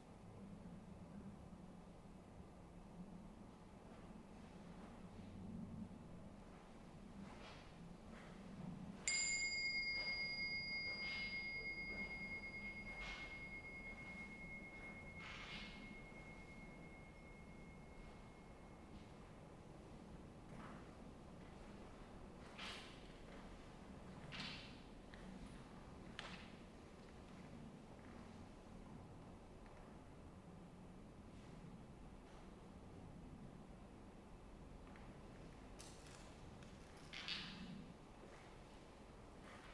St Mary's, Whitchurch-on-Thames - Meditation in St Mary's Church
A fifteen minute meditation at St Mary's Church in Whitchurch. Recorded on a SD788T with a matched pair of Sennheiser 8020's either side of a Jecklin Disk.
22 June 2017, 13:00